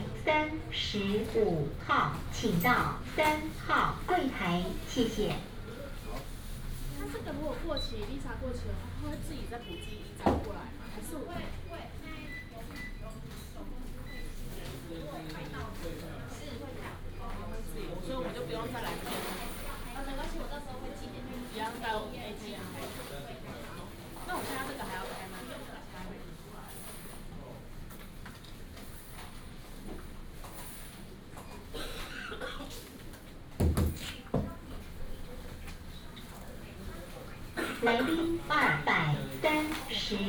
at Post Office
Binaural recordings, Sony PCM D100+ Soundman OKM II